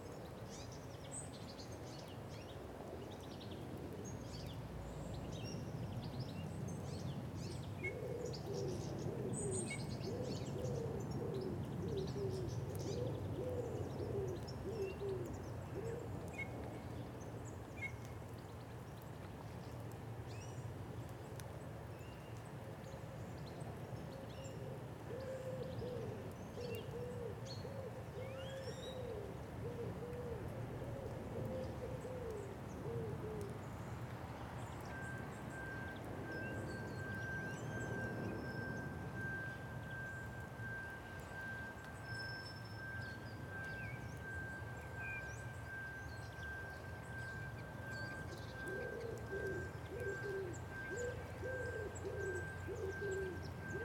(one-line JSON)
{"title": "Contención Island Day 2 inner northwest - Walking to the sounds of Contención Island: Day 2 Wednesday January 6th", "date": "2021-01-06 11:30:00", "description": "The Drive Westfield Drive Parker Avenue Elgy Road Elmfield Grove Wolsingham Road\nRead names carved into headstones\nwalk to shelter under a yew tree\nA grey wagtail bounces off across the graves\nblackbirds appear on top of walls and scold each other", "latitude": "55.00", "longitude": "-1.63", "altitude": "77", "timezone": "Europe/London"}